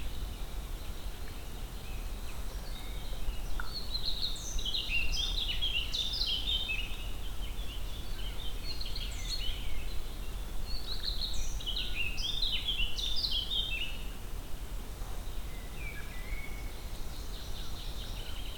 Unnamed Road, Gdańsk, Poland - Forest 1